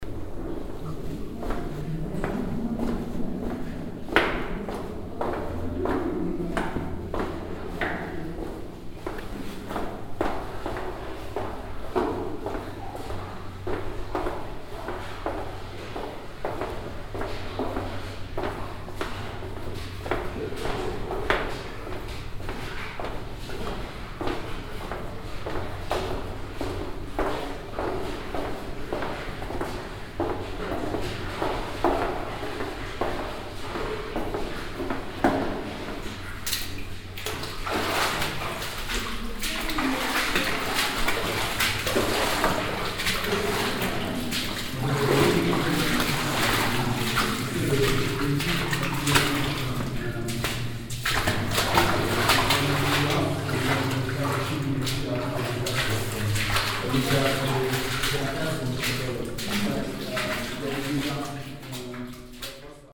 stolzembourg, old copper mine, drift walk
Walking on wooden planks and then inside the tunnel water again. The Voice of a
student who leads us through the drift.
Stolzemburg, alte Kupfermine, Weg
Gehen auf hölzernen Planken und dann im Tunnel wieder Wasser. Die Stimme eines Studenten, der uns führt im resonierenden Hall des Tunnels.
Stolzembourg, ancienne mine de cuivre, promenade dans la galerie
En marche sur des planches de bois puis à l’intérieur du tunnel, on entend à nouveau de l’eau. La voix d’un étudiant qui nous guide à travers la galerie.
Project - Klangraum Our - topographic field recordings, sound objects and social ambiences